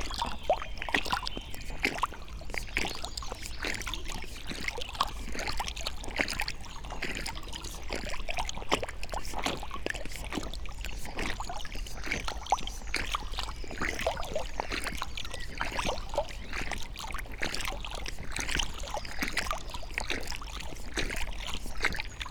inside the pool, mariborski otok - whirl ... in pool